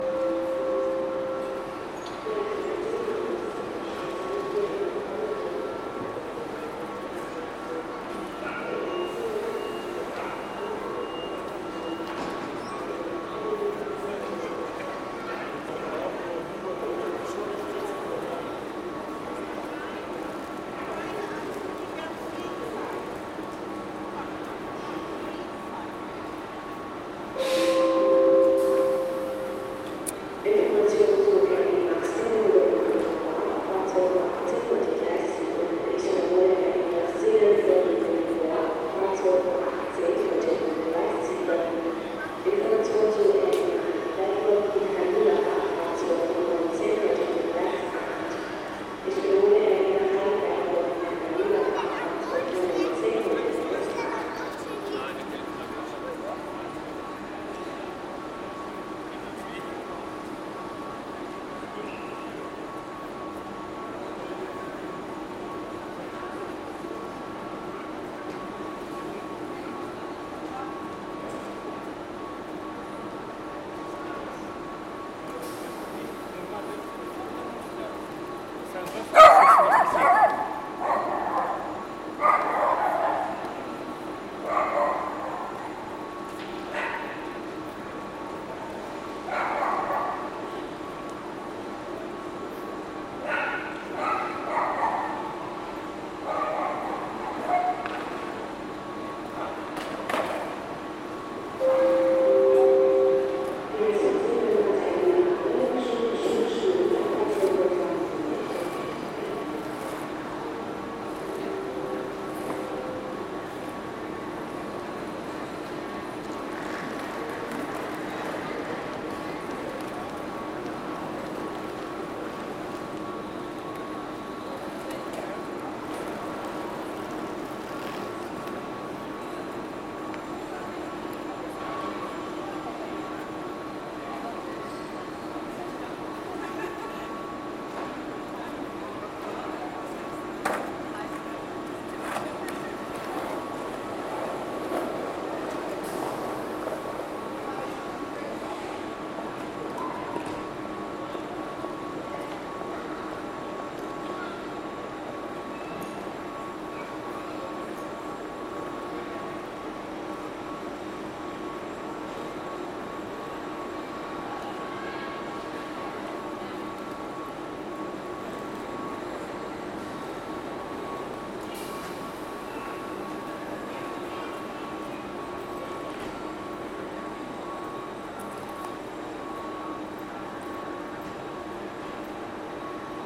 stuttgart, railway station
inside the railwaystation near the platforms
31 July 2011, 15:30